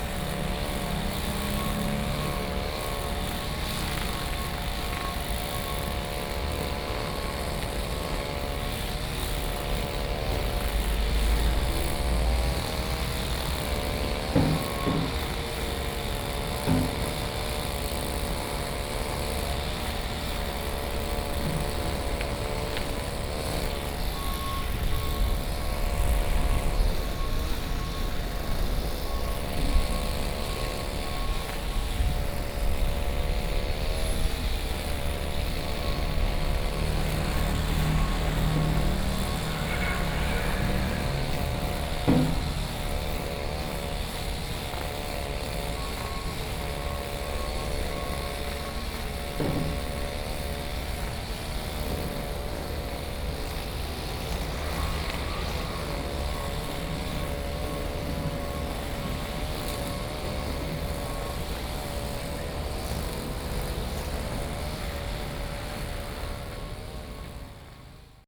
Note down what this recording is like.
Birdsong, Construction noise, Mower, Traffic Sound